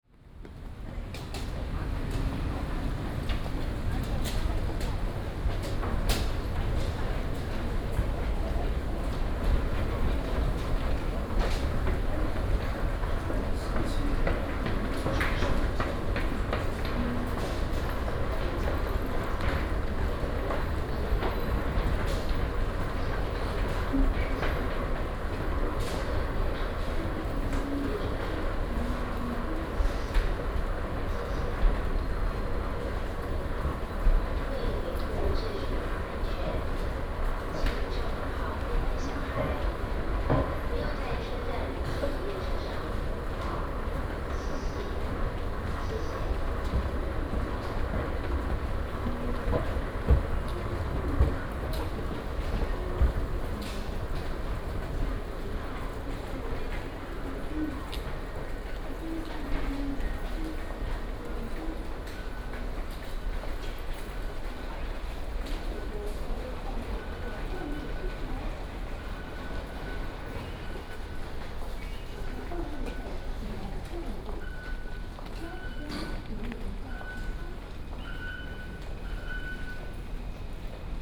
Xinpu Station, Banqiao District - walking into the MRT station
walking into the MRT station
Please turn up the volume a little. Binaural recordings, Sony PCM D100+ Soundman OKM II
31 July, ~09:00